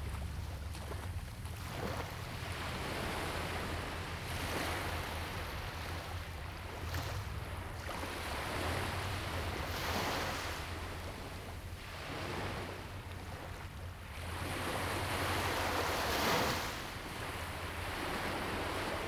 尖山村, Huxi Township - At the beach
At the beach, Sound of the waves
Zoom H2n MS +XY
Penghu County, Husi Township, 204縣道, 21 October